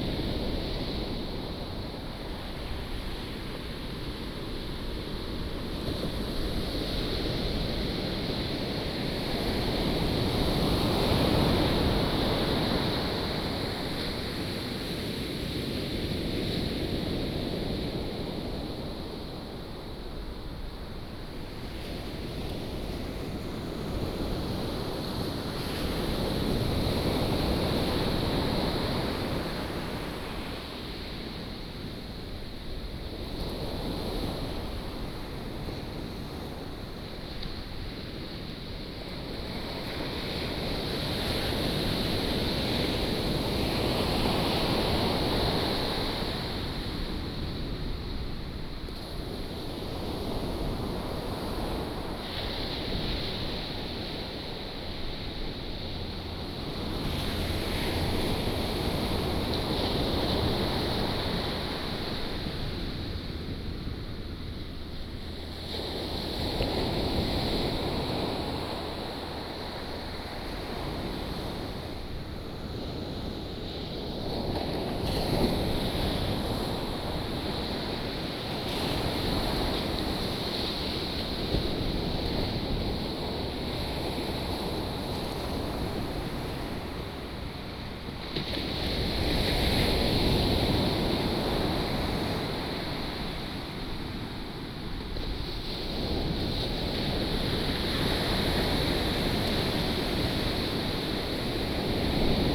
Taitung County, Taiwan - Sound of the waves
Sound of the waves, At the seaside